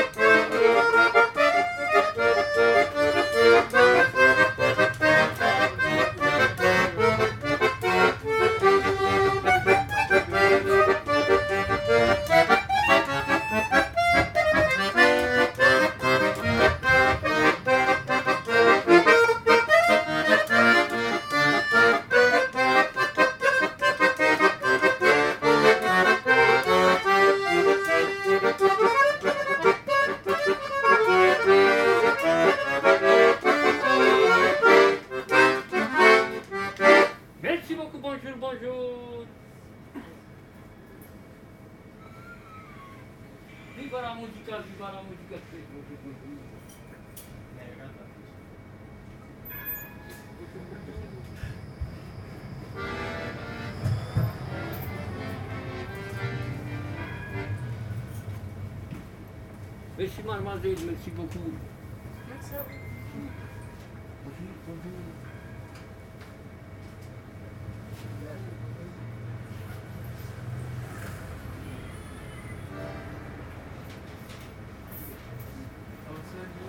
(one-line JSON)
{"title": "Moris, Saint-Gilles, Belgique - Accordionist in the tram 97", "date": "2022-03-26 16:30:00", "description": "Accordéoniste dans le tram 97.\nTech Note : Ambeo Smart Headset binaural → iPhone, listen with headphones.", "latitude": "50.82", "longitude": "4.35", "altitude": "79", "timezone": "Europe/Brussels"}